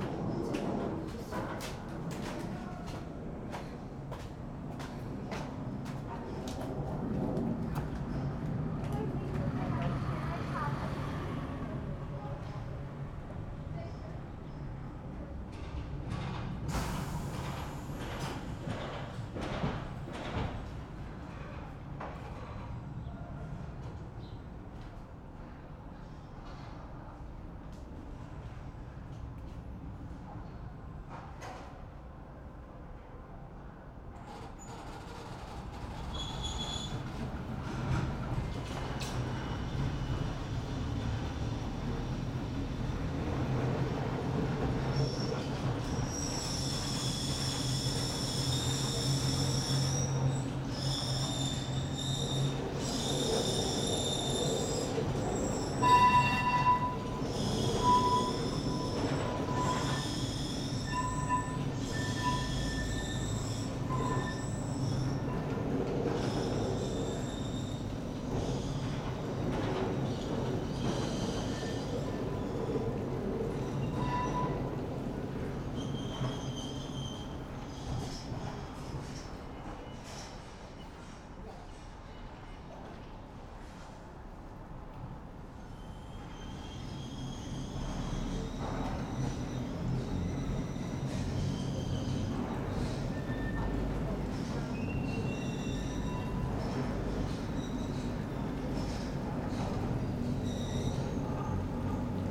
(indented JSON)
{
  "title": "lisbon, calcada do lavra - cable car station",
  "date": "2010-07-01 13:10:00",
  "description": "upper station of the tram. one waggon departing downwards, the other one arriving. echos of nearby construction workers in this narrow street.",
  "latitude": "38.72",
  "longitude": "-9.14",
  "altitude": "64",
  "timezone": "Europe/Lisbon"
}